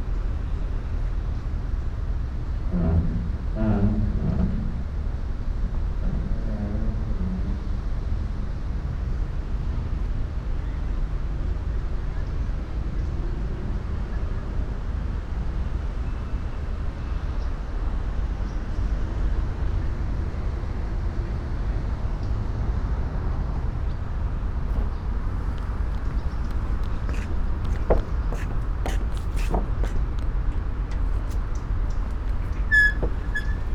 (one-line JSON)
{"title": "islands tail, Mitte, Berlin, Germany - time map", "date": "2015-09-02 13:53:00", "description": "... on the hull of the ship\nSonopoetic paths Berlin", "latitude": "52.51", "longitude": "13.41", "altitude": "32", "timezone": "Europe/Berlin"}